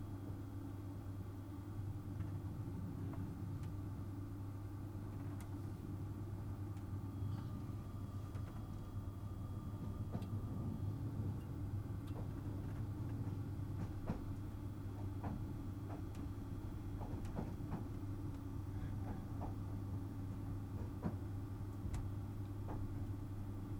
Istanbul - Berlin: Relocomotivication in Oradea, Romania
Sleeplessness witnesses the next relocomotivication. The romanian locomotive is being detached from the train. Although, this is just a guess, brought about by very indistinct vibrations going through the trains body in the very night.